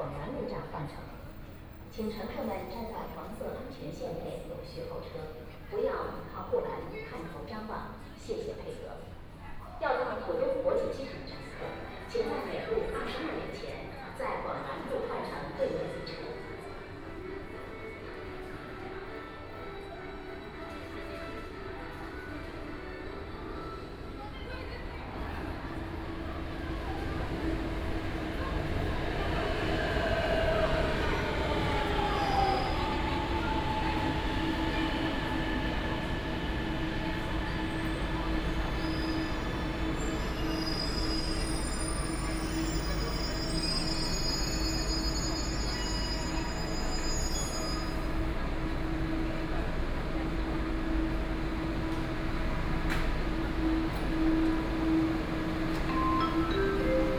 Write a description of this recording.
In the subway station platform, Crowd, Voice message broadcasting station, Binaural recording, Zoom H6+ Soundman OKM II